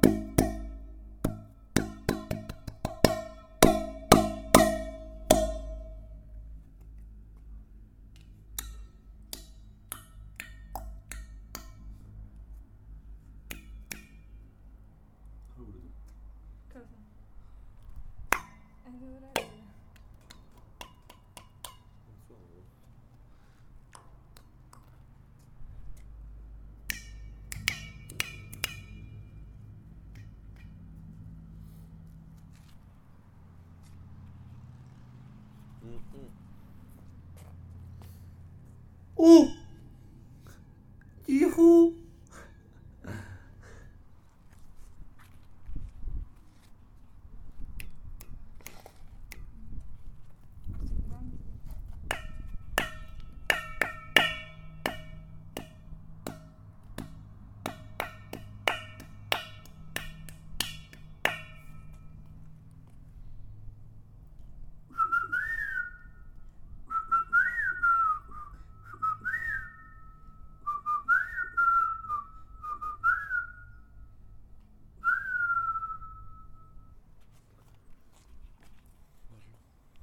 Taka-Töölö, Helsinki, Finland - Playing Sibelius Monument with hands
Binaural recordings. I suggest to listen with headphones and to turn up the volume.
Here I'm doing some "sound-tests" with Sibelius' monument. It's like a giant organ made with cylindrical metallic tubes, but it sounds good.
Recordings made with a Tascam DR-05 / by Lorenzo Minneci
14 August 2016, 16:00